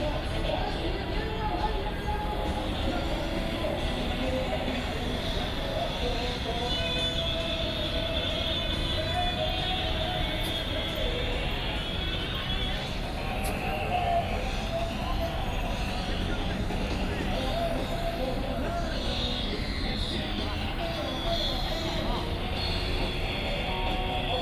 Ketagalan Boulevard, Zhongzheng, Taipei City - anti-nuclear protesters
the event against nuclear power, Sony PCM D50 + Soundman OKM II